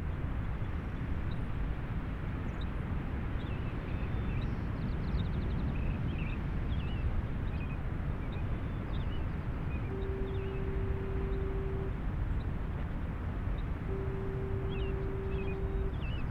sounds of the bay in the early morning